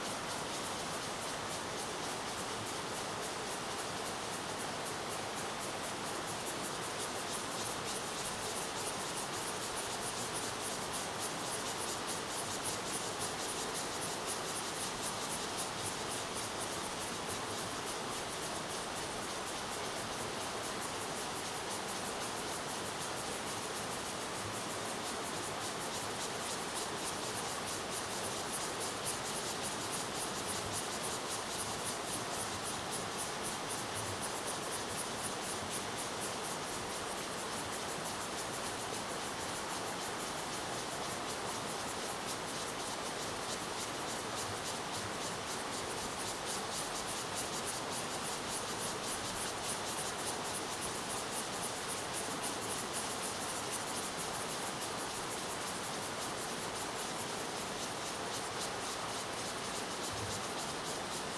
秀姑橋, Rueisuei Township - Cicadas and streams
Cicadas sound, The sound of streams
Zoom H2n MS+XY
Rueisuei Township, Hualien County, Taiwan